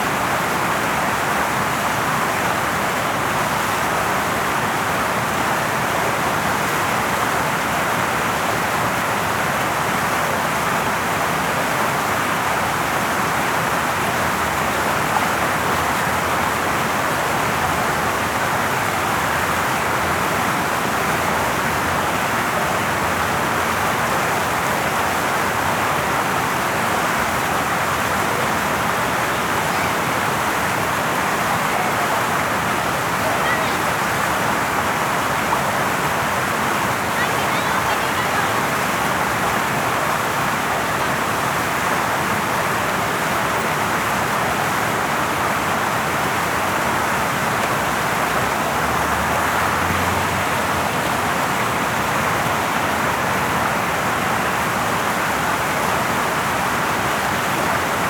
{"title": "W 50th St, New York, NY, USA - Avenue of the Americas Fountain", "date": "2022-08-23 17:23:00", "description": "Recording of Avenue of the Americas Fountain that features a small waterfall.", "latitude": "40.76", "longitude": "-73.98", "altitude": "20", "timezone": "America/New_York"}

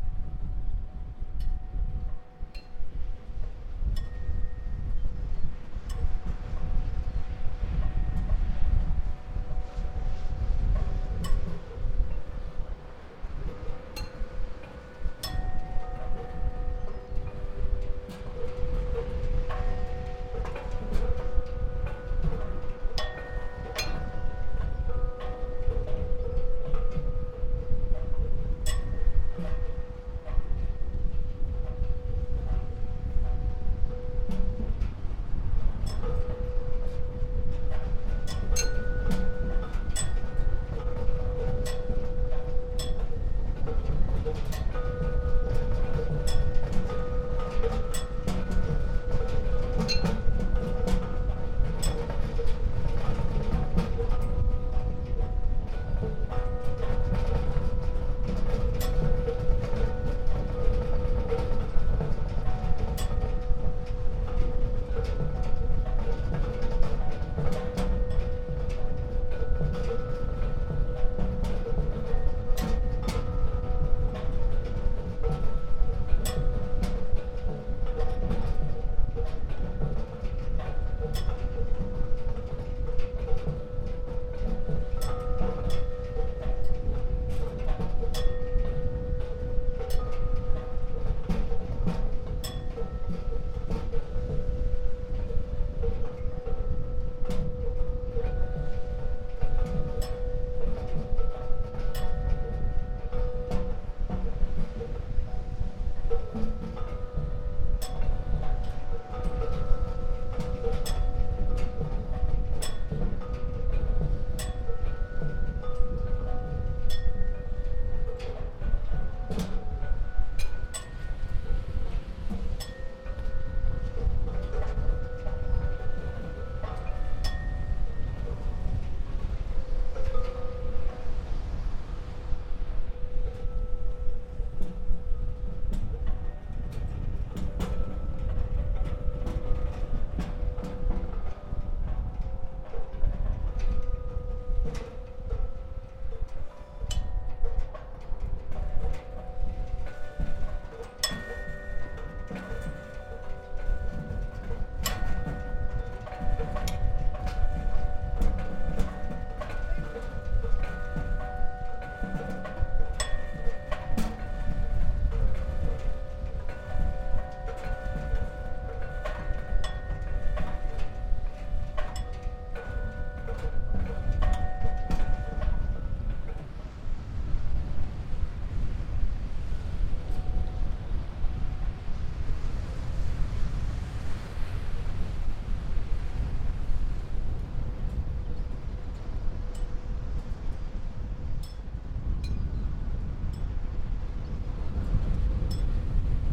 {"title": "Haywel Davies sound installation Cove Inn esplanade Portland Dorset UK", "date": "2010-09-19 17:27:00", "description": "Hywel Davies sound installation commission for b-side Weymouth and Portland Dorset UK", "latitude": "50.56", "longitude": "-2.45", "altitude": "4", "timezone": "Europe/London"}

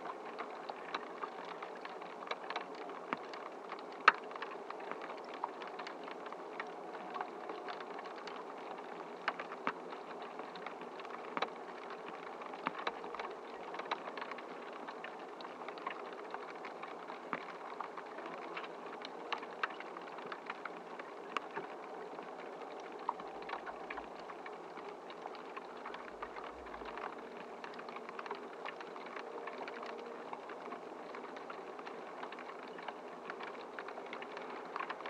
{"title": "Grybeliai, Lithuania, tiny tiny ice", "date": "2018-03-15 15:40:00", "description": "contact microphones on the list of very tiny ice...in the begining you can hear a plane flying above...", "latitude": "55.50", "longitude": "25.56", "altitude": "107", "timezone": "Europe/Vilnius"}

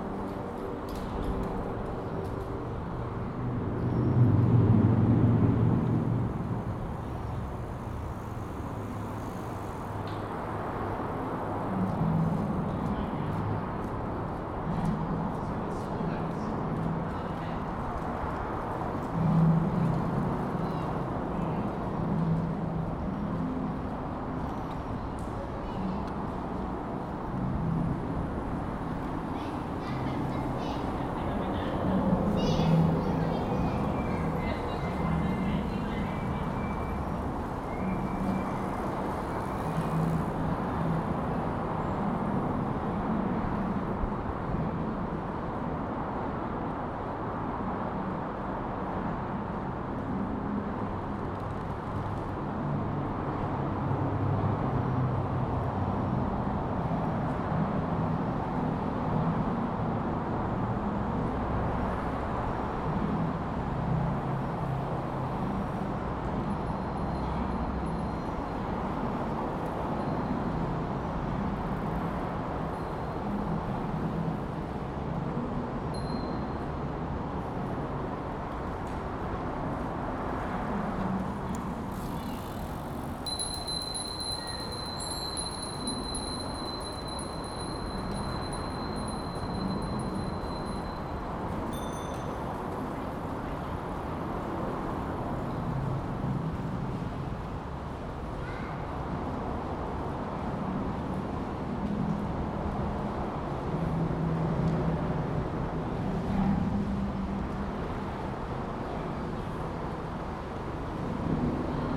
{"title": "Lake Shore Blvd W, Etobicoke, ON, Canada - Busy bike route passing under the gardiner", "date": "2020-06-07 02:42:00", "description": "Recorded underneath the Gardiner Expressway bridge over the Humber River, right next to a bike lane underpass. The space underneath resonates with the traffic passing overhead, large groups of cyclists are passing by, and a few small boats towards the lake\nRecorded on a zoom H2N.", "latitude": "43.63", "longitude": "-79.47", "altitude": "76", "timezone": "America/Toronto"}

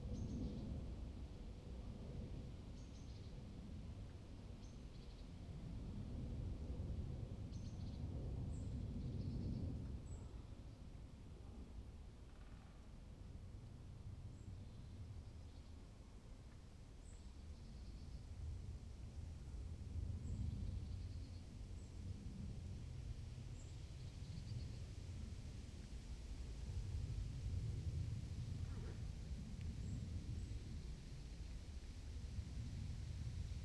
{"title": "Berlin Wall of Sound, Lichtenrade border 080909", "latitude": "52.39", "longitude": "13.39", "altitude": "44", "timezone": "Europe/Berlin"}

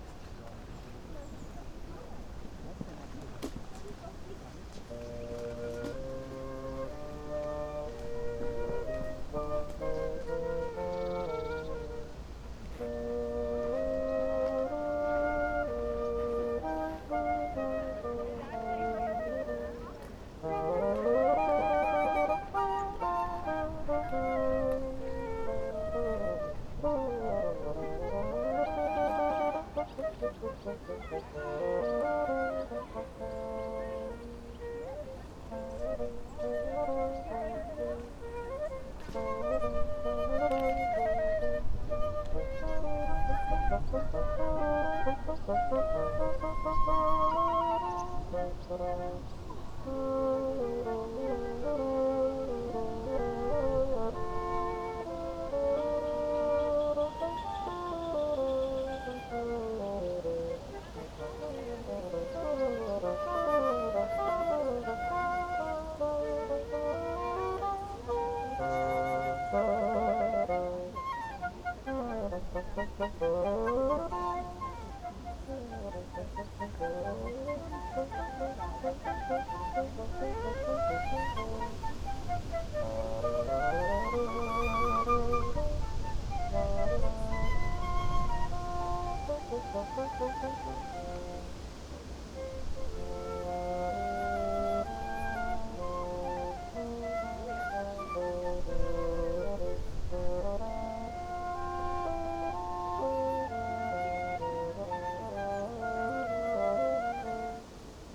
{"title": "Landpyramide, Branitzer Park, Cottbus - musicians rehearsing, ambience", "date": "2019-08-24 15:50:00", "description": "two musicians rehearsing in Branitzer Park, sounds of a park train, pedestrians, kids, swans and wind\n(Sony PCM D50)", "latitude": "51.74", "longitude": "14.36", "altitude": "80", "timezone": "Europe/Berlin"}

